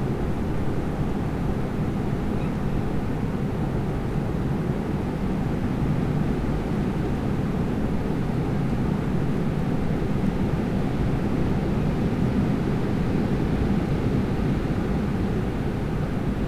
seedorf: ehemaliges schulhaus - the city, the country & me: in front of former school house
during storm
the city, the country & me: march 8, 2013
8 March, 01:23